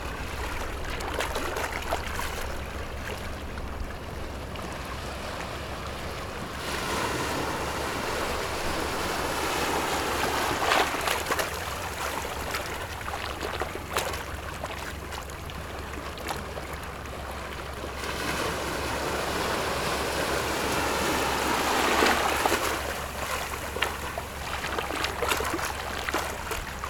萊萊地質區, Gongliao District - waves and Rocks
Rocks and waves, Very hot weather, Traffic Sound
Zoom H6 MS+ Rode NT4
New Taipei City, Taiwan, 29 July 2014, 18:17